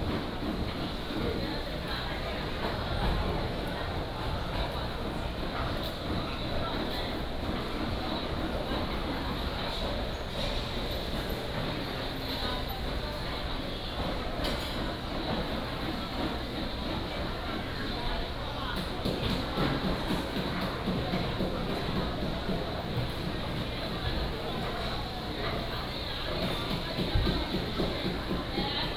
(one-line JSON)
{"title": "保安市場, 台南市 - in the market", "date": "2017-02-18 11:10:00", "description": "in the market", "latitude": "22.99", "longitude": "120.19", "altitude": "9", "timezone": "Asia/Taipei"}